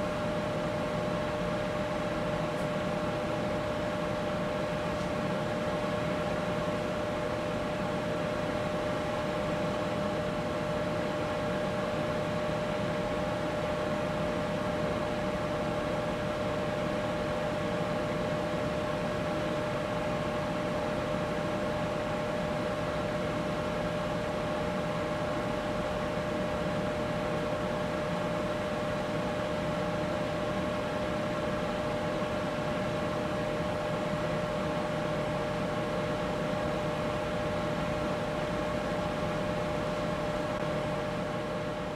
вулиця Поштова, Костянтинівка, Донецька область, Украина - Звуки работы кондитерской фабрики КОНТИ
Вечерняя улица, вторая смена на Конти, работа фабрики